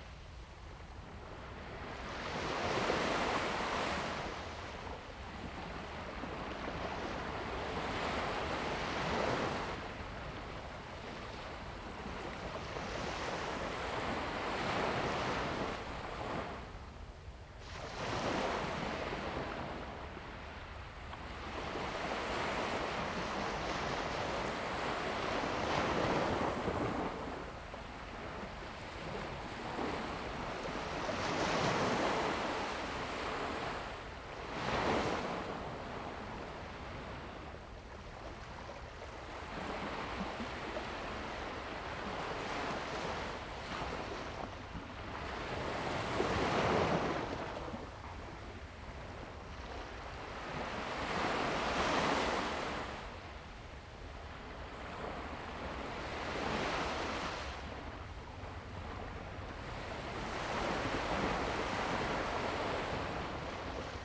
雙口, Lieyu Township - Sound of the waves
Sound of the waves, At the beach
Zoom H2n MS+XY
福建省, Mainland - Taiwan Border